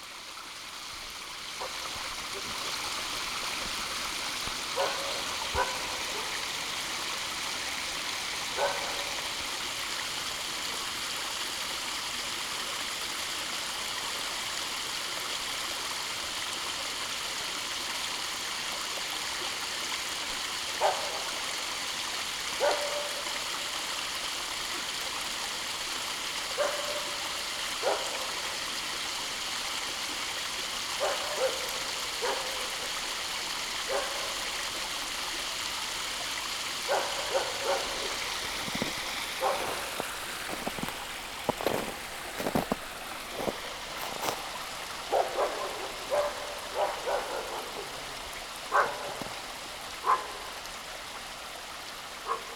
Rataje nad Sázavou, Česko - sníh a psi
procházks ve sněhu pod Pirksteinem kolem psů